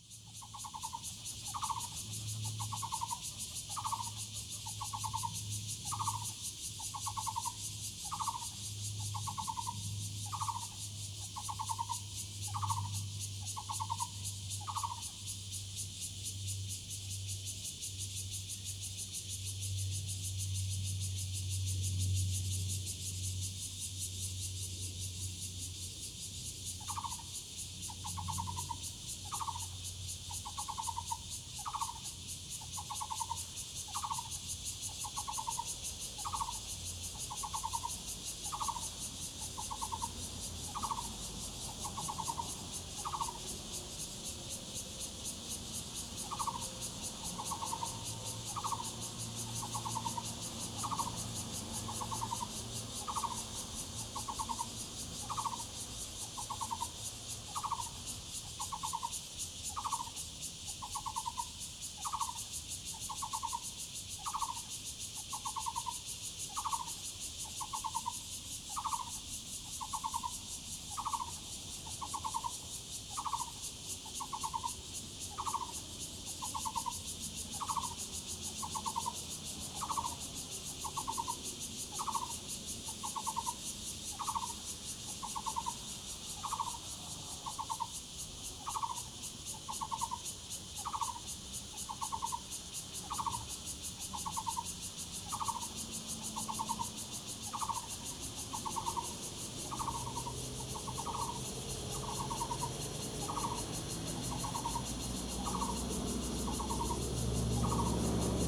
Birds and cicadas, traffic sound, Zoom H2n MS+XY